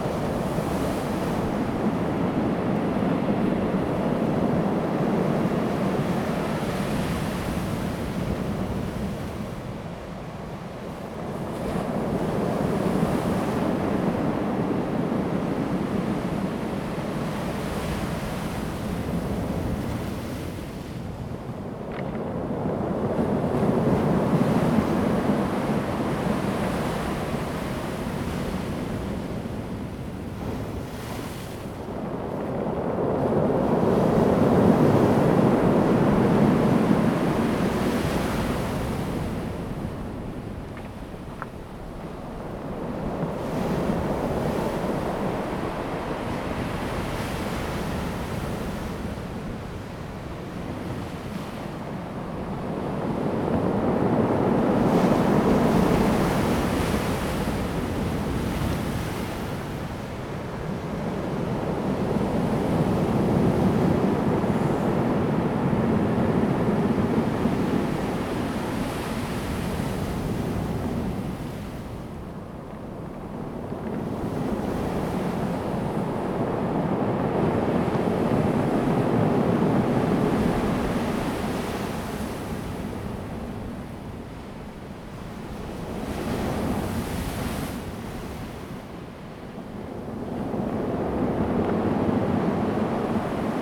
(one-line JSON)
{"title": "台26線南田海岸, Daren Township - rolling stones and waves", "date": "2018-03-23 11:35:00", "description": "Sound of the waves, wind, Wave impact produces rolling stones\nZoom H2n MS+XY", "latitude": "22.25", "longitude": "120.89", "altitude": "4", "timezone": "Asia/Taipei"}